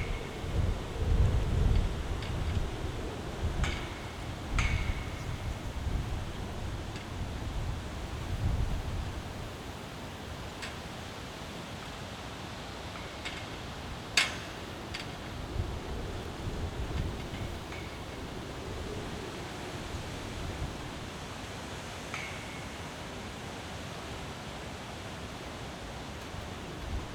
it seems some excavation or construction work is going on at this place, the area is surrounded by a metal fence, which is moved by the wind, on a bright autumn sunday morning.
(SD702, AT BP4025)